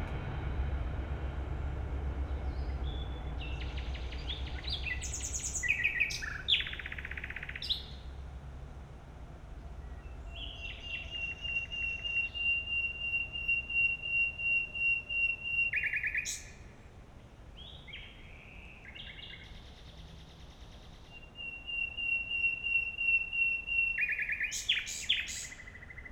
Mauerweg / Heidekampweg, Berlin, Deutschland - nightingales
Berlin, Mauerweg, two nightingales singing, S-Bahn trains passing-by occasionally, this recording is closer to the second nightingale
(SD702, AT BP4025)